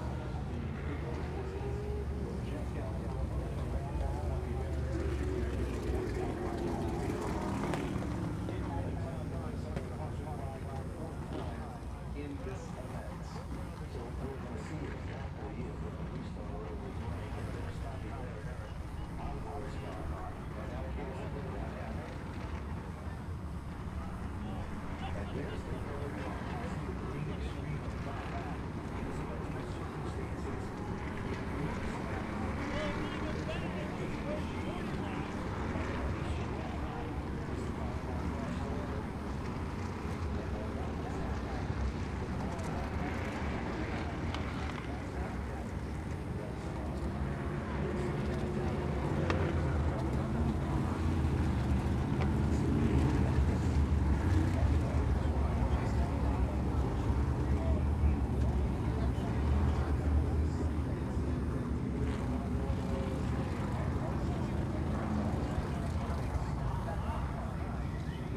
Stafford Speedway - Open Modified Feature
The sound of 23 Open Modifieds at Stafford Speedway in their 81 lap feature race